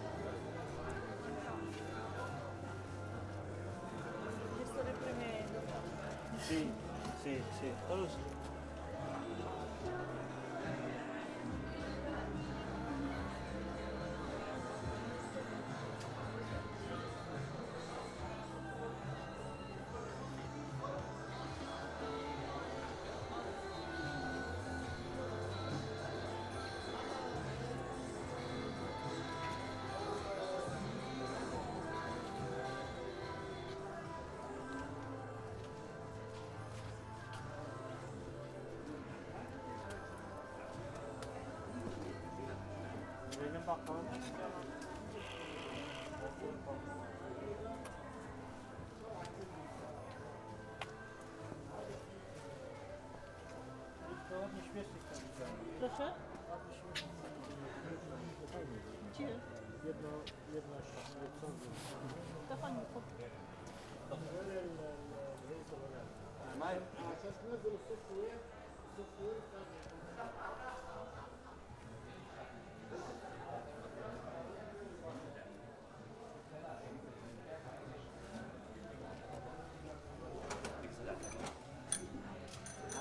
Alt-Treptow district, flea market at Eichenstraße - sailing the river through the flea market jungle
walking around the flea market. rich blend of sounds living in this unusual place. conversations fade in and out. many objects on display are mechanical or electrical emitting strange noises. some needed to be manipulated to squeeze out a sound. turkish pop music, radio and tv broadcasts blasting from old, cheap tv and radio transmitters. shouts of the sellers. i felt like riding a boat on the amazon and listening to the sounds of the flee market jungle. endless journey.
Berlin, Germany